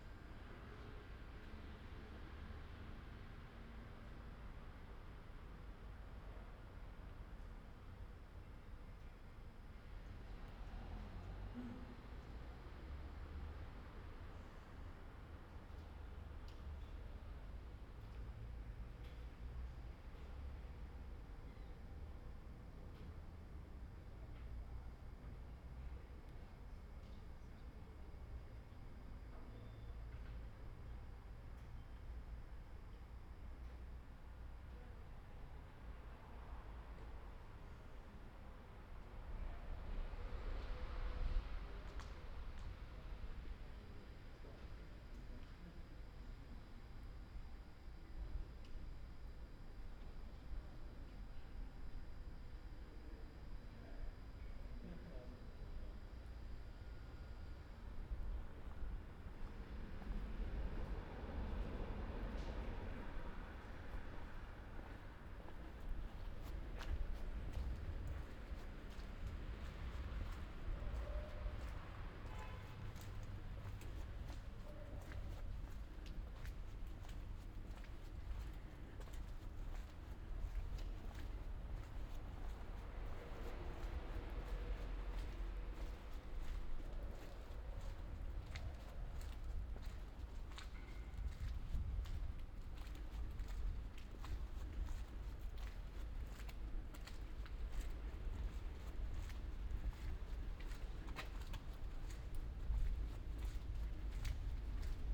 "Monday night walk in San Salvario, before first curfew night, in the time of COVID19": Soundwalk
Monday, October 26th 2020: first night of curfew at 11 p.m. for COVID-19 pandemic emergence. Round trip walking from my home in San Salvario district. Similar path as in previous Chapters.
Start at 10:47 p.m. end at 11:26 p.m. duration 38’40”
Path is associated with synchronized GPS track recorded in the (kmz, kml, gpx) files downloadable here:
Piemonte, Italia, 26 October 2020, 22:47